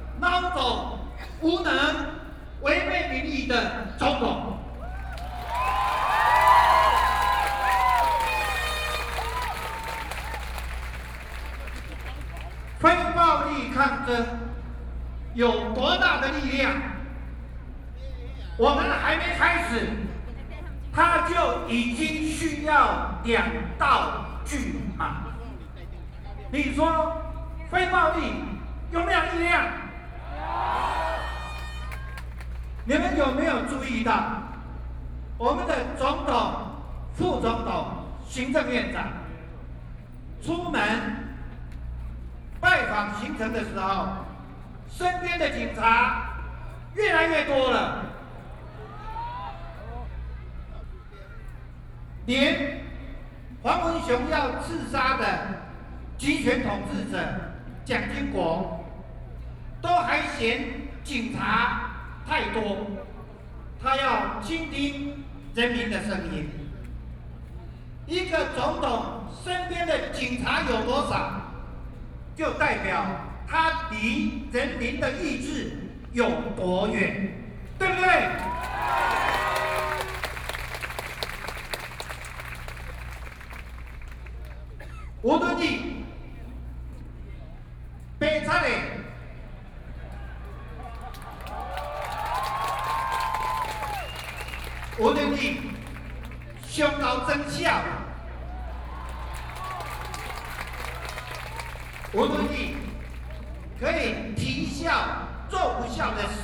Ketagalan Boulevard, Zhongzheng District - Protest Speech
Protest Speech, The assassination of the former president who is professor of speech, Sony PCM D50 + Soundman OKM II
Zhongzheng District, Taipei City, Taiwan, 18 August